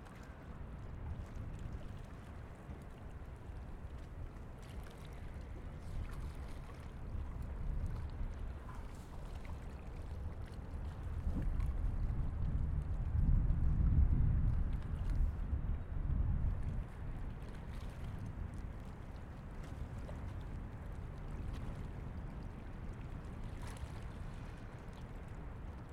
Wasted
‘‘A useful thing that is not being used is wasted. As soon as it is used or not useful it becomes no waste and a thing on its own. When a useless thing is being used anyway it creates a new waste.’’